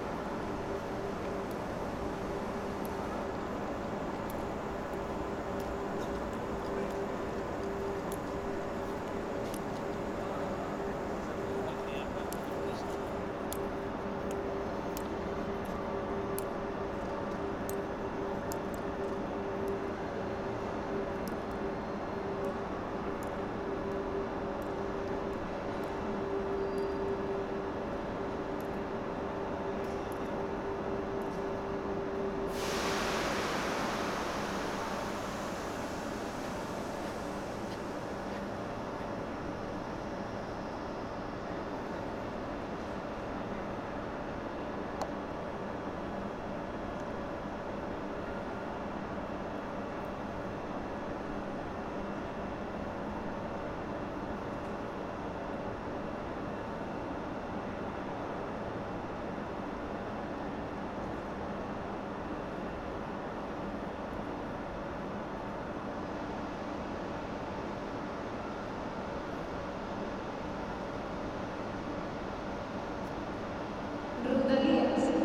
{"title": "Estació de França", "date": "2011-01-26 12:46:00", "description": "Mythical ferroviary Station. Long reverbs and echoing", "latitude": "41.38", "longitude": "2.19", "altitude": "20", "timezone": "Europe/Madrid"}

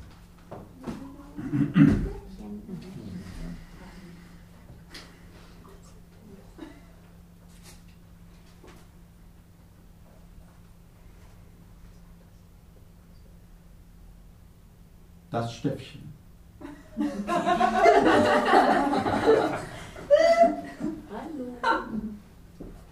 {"title": "Der Kanal, Weisestr. 59. Auschschnitt aus dem 4. Synergeitischen Symposium - Der Kanal, Ausschnitt aus dem 7. Synergeitischen Symposium", "date": "2011-12-17 22:33:00", "description": "This is the second text, entitled ::Das Stöffchen::", "latitude": "52.48", "longitude": "13.42", "timezone": "Europe/Berlin"}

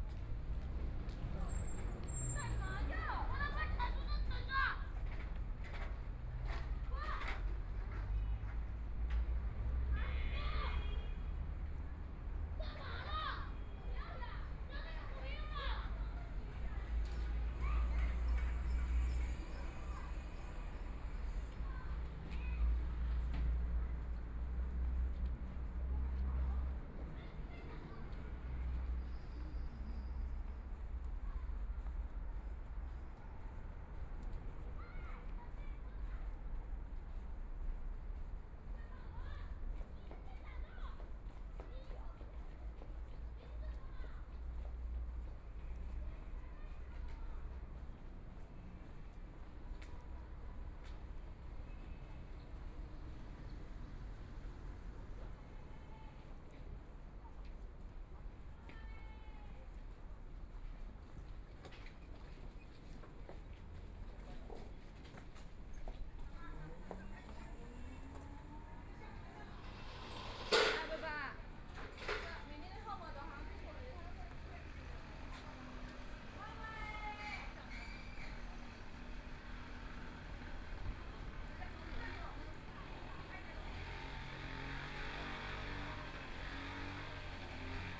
{"title": "Zi Hua Road, Shanghai - in the Street", "date": "2013-11-29 16:39:00", "description": "Walking through the streets in traditional markets, Binaural recording, Zoom H6+ Soundman OKM II", "latitude": "31.23", "longitude": "121.48", "altitude": "7", "timezone": "Asia/Shanghai"}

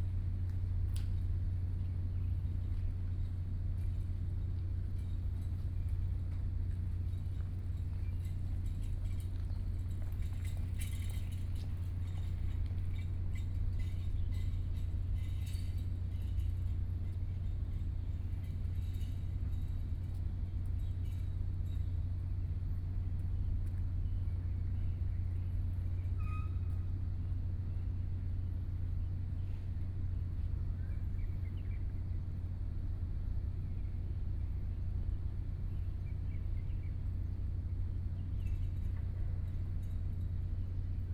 {"title": "鹽埕區新化里, Kaoshiung City - Morning streets", "date": "2014-05-14 06:29:00", "description": "Birds singing, Morning pier, Sound distant fishing, People walking in the morning, Bicycle", "latitude": "22.62", "longitude": "120.28", "altitude": "4", "timezone": "Asia/Taipei"}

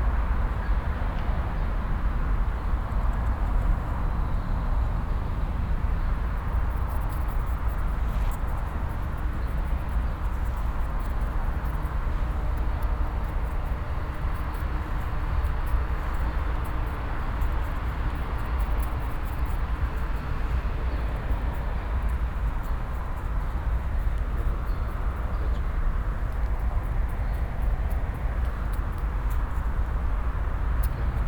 {"title": "Binaural Walk, 2010-07-18, Botanical Gardens, Madrid", "date": "2010-07-18 20:21:00", "description": "2010-07-18, Botanical Gardens, Madrid\nThis soundwalk was organized in the following way: one of the participants is\npicking up environmental sounds through a pair of OKM Soundman in-ear binaural\nmicrophones, while the other participant is wearing a pair of headphones\nmonitoring the sound environment picked up by the former. In a sense, one\nparticipant can direct, modify, and affect the acoustic orientation and\nperception of the other one. Halfway through the exercise, they swap roles.\nThe place - Madrids Jardín Botánico - was chosen because it is fairly to the\ngeneral traffic noise of the city, while still offering the occasional quiet\nspot.\nThe soundwalk was designed as an exercise in listening, specifically for the\n1st World Listening Day, 2010-07-18.\nWLD World Listening Day", "latitude": "40.41", "longitude": "-3.69", "altitude": "639", "timezone": "Europe/Madrid"}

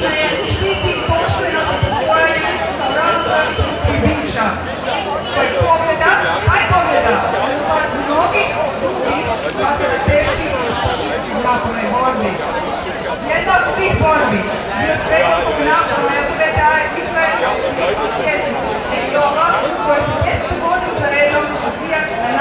{"title": "Zagreb, Pride - UN resolution on the human rights of LGBT persons, 17.6.2011.", "date": "2011-06-18 16:30:00", "description": "thanking the police for protection, but reproaching them for deminishing the number of participants in official reports;the Un Resolution on the Human Rights of LGBT Persons was accepted the day before", "latitude": "45.81", "longitude": "15.98", "altitude": "128", "timezone": "Europe/Zagreb"}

